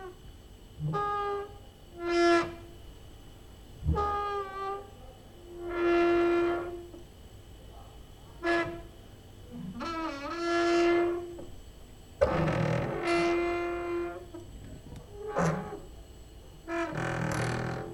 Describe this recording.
no cricket ... just fridge inside and distant human voices outside ... exercising creaking with already tired wooden doors inside